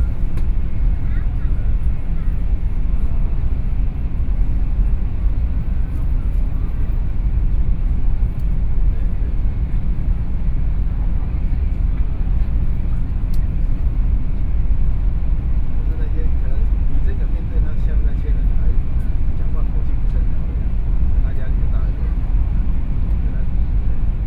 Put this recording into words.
Train speed up, Sony PCM D50 + Soundman OKM II